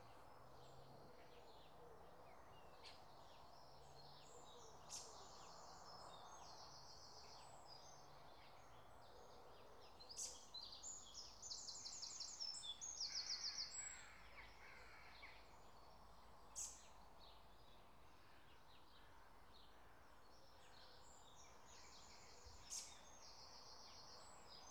Completely surrounded by trees and bushes, away from the river path, early on a Sunday morning.
Dorchester, UK, June 2017